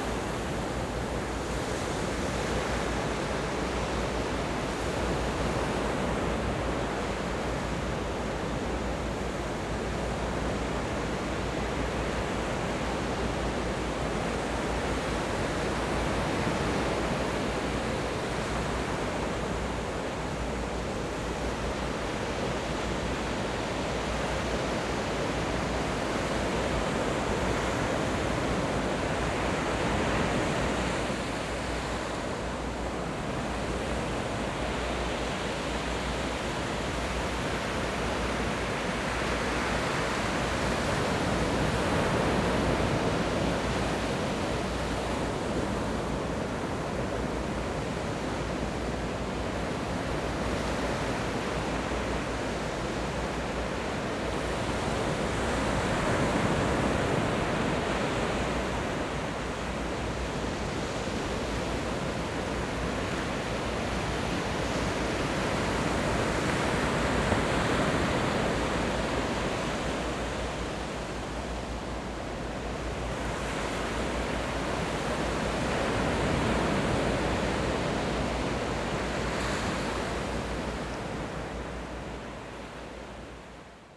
Yilan County, Taiwan - Sound of the waves
Sound of the waves
Zoom H6 MS+ Rode NT4